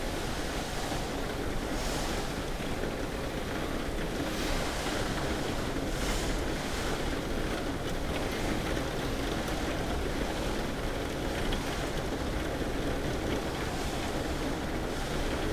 {
  "title": "Sint-Jans-Molenbeek, Belgium - Rain on skylight, vacuuming indoors",
  "date": "2013-06-19 11:00:00",
  "description": "We were having coffee downstairs when a massive thunderstorm struck outside. I put the recorder under the skylight and it recorded the last moments of the downpour, mixed with the sounds of the building being cleaned with a vacuum cleaner. The FoAM space being cleaned inside and out. Recorded just with EDIROL R-09 recorder.",
  "latitude": "50.86",
  "longitude": "4.34",
  "altitude": "16",
  "timezone": "Europe/Brussels"
}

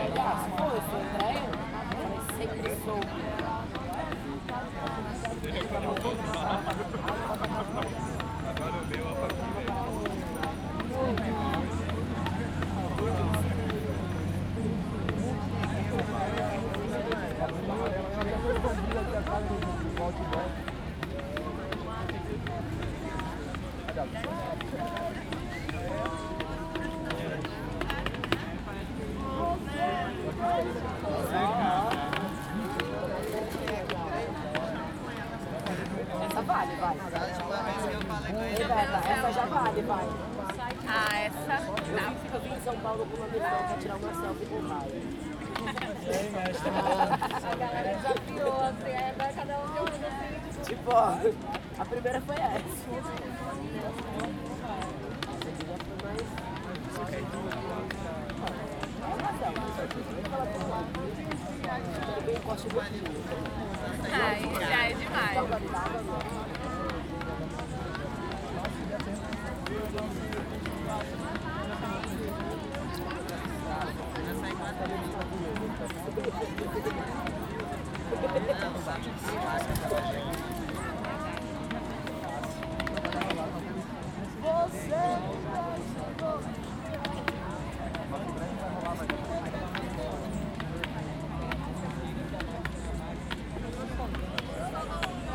{
  "title": "Salvador, Bahia, Brazil - Marijuana March Ambience",
  "date": "2014-01-01 15:16:00",
  "description": "The ambience before a legalise marijuana march, in Salvador, Brazil.",
  "latitude": "-13.01",
  "longitude": "-38.52",
  "altitude": "6",
  "timezone": "America/Bahia"
}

